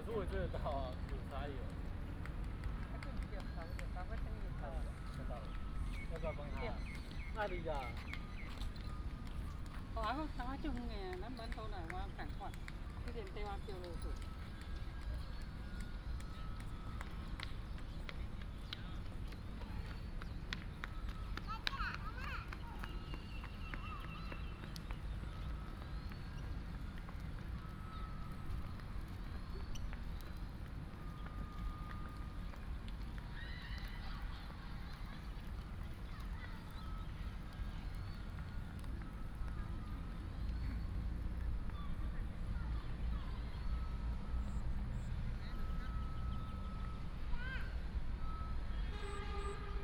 楓樹腳公園, Bade Dist., Taoyuan City - in the Park
in the park, birds sound, traffic sound
Bade District, Taoyuan City, Taiwan, 18 July 2017, 17:41